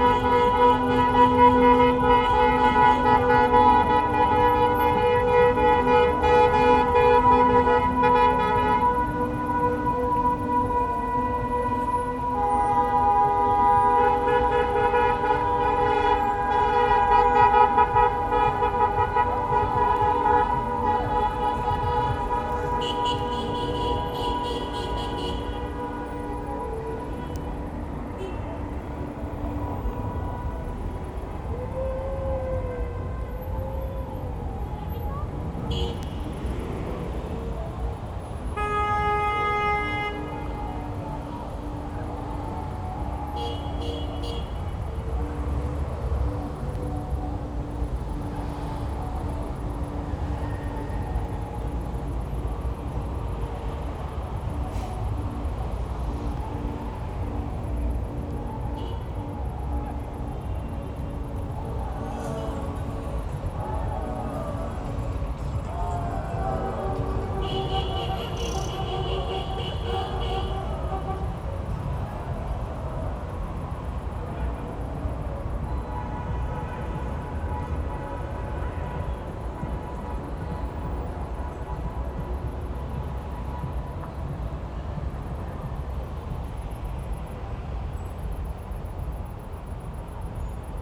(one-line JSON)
{"title": "Anderlecht, Belgium - Turkish wedding motorcade", "date": "2016-10-15 16:39:00", "description": "A larger sonic place - a patch of overgrown disused land. The variety of plants here is impressive. There a grasshoppers and birds. It is an open site and the sounds from around are very obvious, traffic, music. Today a wedding clebrtion motorcade passes with horns blaring.", "latitude": "50.84", "longitude": "4.32", "altitude": "24", "timezone": "Europe/Brussels"}